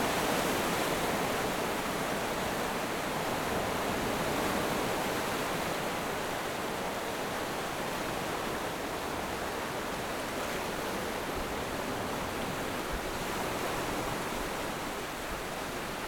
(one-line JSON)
{"title": "石城里, Toucheng Township - Sound of the waves", "date": "2014-07-21 15:32:00", "description": "Sound of the waves, On the coast\nZoom H6 MS mic + Rode NT4", "latitude": "24.98", "longitude": "121.95", "altitude": "7", "timezone": "Asia/Taipei"}